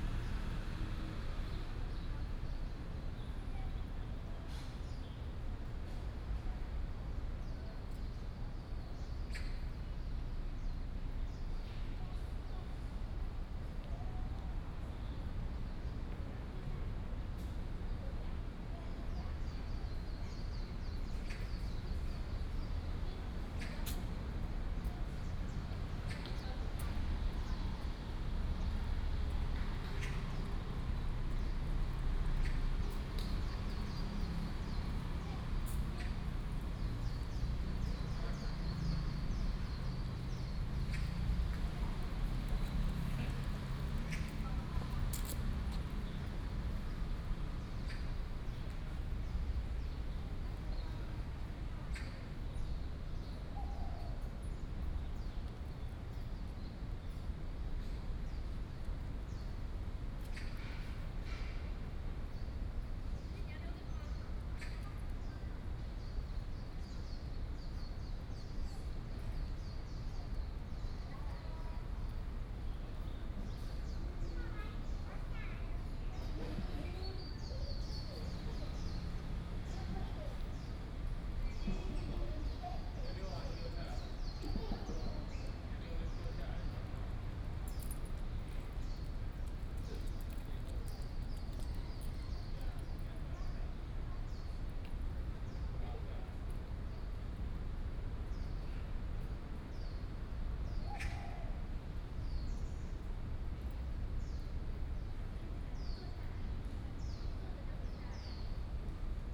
in the Park, Bird calls, Traffic Sound